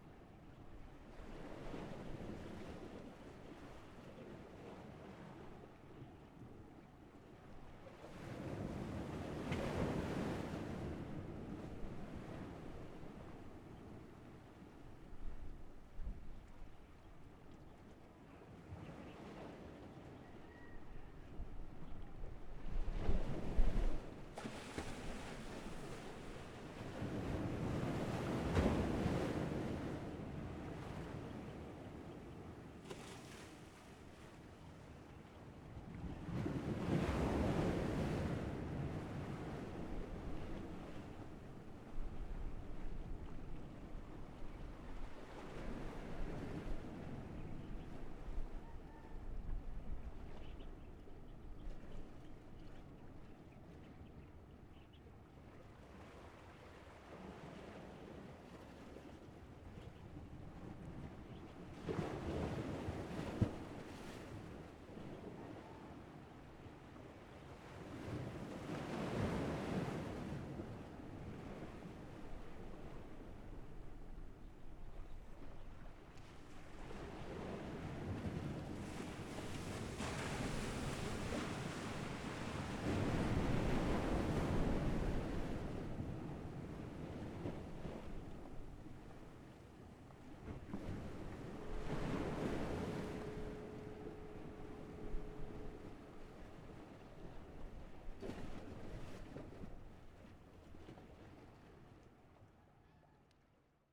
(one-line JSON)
{"title": "龍蝦洞, Hsiao Liouciou Island - Special Rocks", "date": "2014-11-01 15:49:00", "description": "Chicken sounds, On the coast, Sound of the waves, Birds singing\nZoom H6 XY", "latitude": "22.35", "longitude": "120.39", "altitude": "6", "timezone": "Asia/Taipei"}